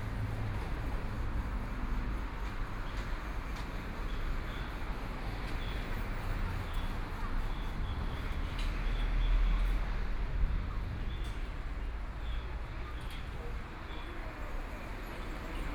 Fuxing Road, Shanghai - Walking across the different streets
Walking across the different streets, Walking on the street, Traffic Sound, Binaural recording, Zoom H6+ Soundman OKM II
3 December, 14:54